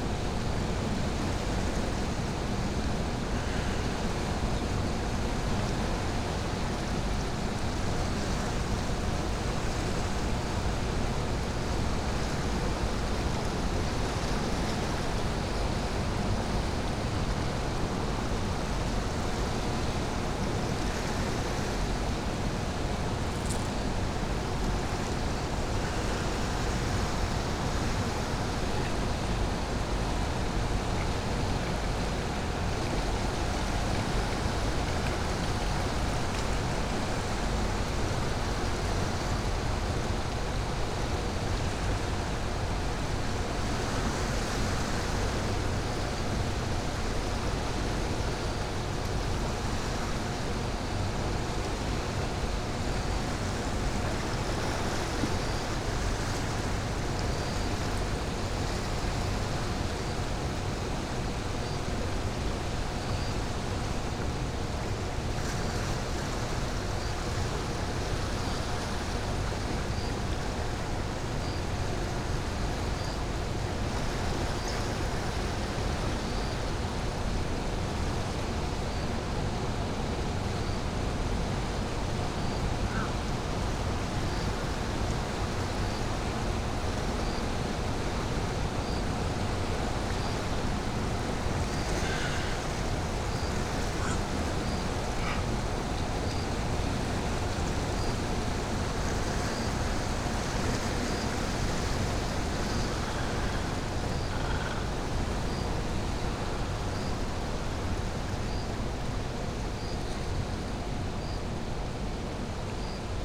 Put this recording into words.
Egrets, Grey Herons and Cormorants gather at safe perches at the foot of Uiam hydroelectric dam...overlapping sounds of 1. the electricity distribution lines 2. water flow through the dam 3. bird calls and even the sound of their wing beats slapping the surface of the river as they take flight...